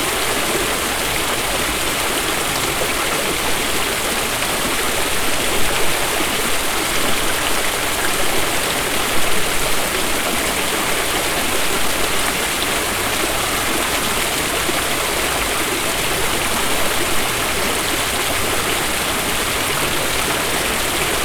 Old Spicewood Springs Rd, Austin, TX, USA - Lower Bull Creek Waterfall, Austin Texas
Recording of a waterfall on lower Bull Creek, part of the network of green belts in Austin, Texas. Recorded with a Tascam DR22, at about two meters distance.